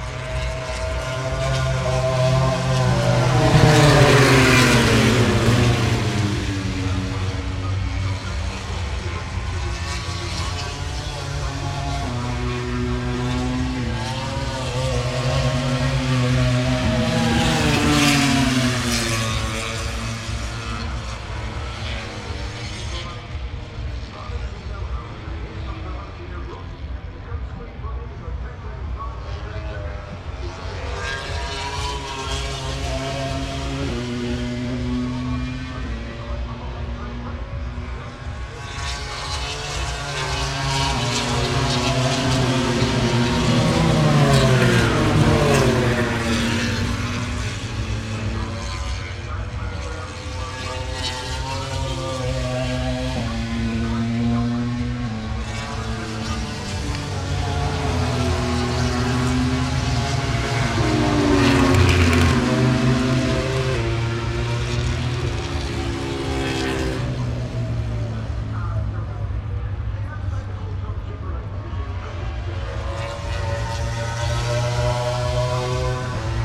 Silverstone Circuit, Towcester, UK - british motorcycle grand prix 2019 ... moto grand prix ... fp1 contd ...
british motorcycle grand prix 2019 ... moto grand prix fp1 contd ... some commentary ... lavalier mics clipped to bag ... background noise ... the disco goes on ...
East Midlands, England, UK, 23 August, 10:30